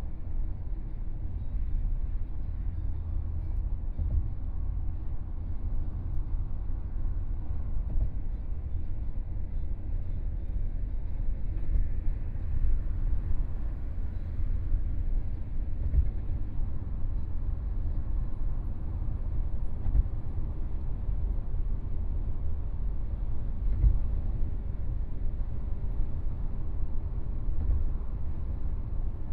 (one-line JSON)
{"title": "The Free Online Dictionary and Encyclopedia, Shanghai - in the Taxi", "date": "2013-11-20 15:02:00", "description": "On the highway, Binaural recording, Zoom H6+ Soundman OKM II", "latitude": "31.17", "longitude": "121.69", "altitude": "5", "timezone": "Asia/Shanghai"}